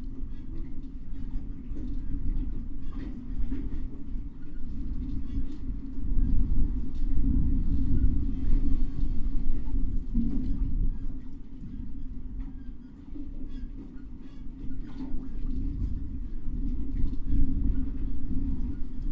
중도 부두 (中島) Jung Island disused wharf_gusty day_March 2020...a quiet place, small sounds and sounds from a distance are audible....sounds that arrive under their own power or blown on the wind....listening at open areas on the wharf, and cavities (disused ferry interior, cavities in the wharf structure, a clay jar)….in order of appearance…
강원도, 대한민국, 21 March 2020